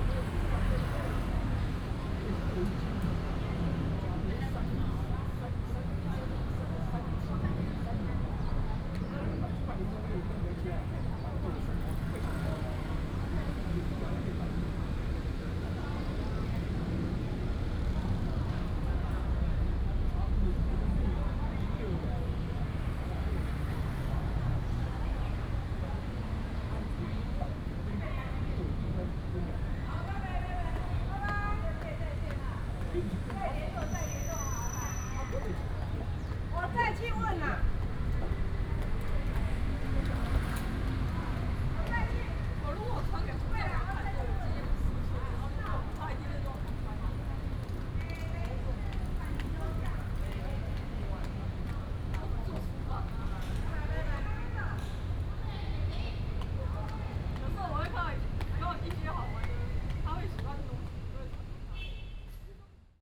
Ren’ai Park, Da’an Dist., Taipei City - in the Park
in the Park, Traffic Sound, Hot weather
Taipei City, Taiwan